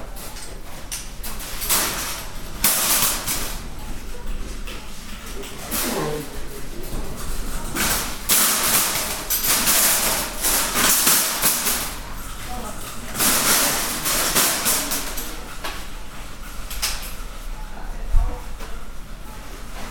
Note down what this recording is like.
Noises from the front room of a supermarket. Typical Slashing Sound. Recorded with Tascam DP-05